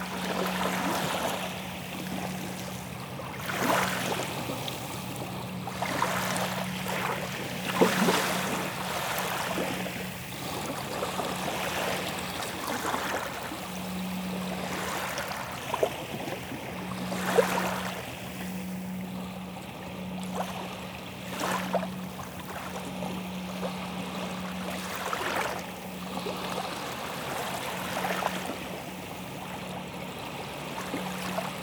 Nieuwvliet, Nederlands - The sea
Smooth sound of the sea on the Zwartepolder beach.